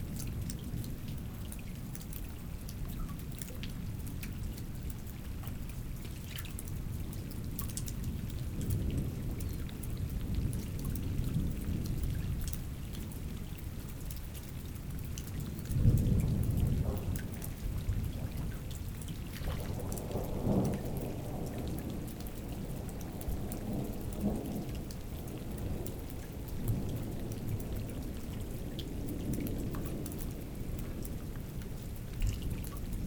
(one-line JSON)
{"title": "Garnarich, Arménie - Storm", "date": "2018-09-10 17:00:00", "description": "A strong storm on the very poor village of Garnarich. We wait below a small bridge and near a small river. We are wet and cold.", "latitude": "41.08", "longitude": "43.61", "altitude": "2034", "timezone": "Asia/Yerevan"}